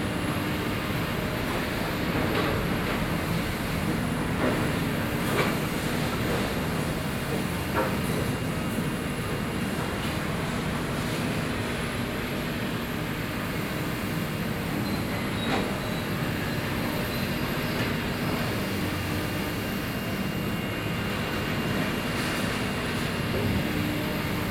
New Taipei City, Taiwan - Construction
9 November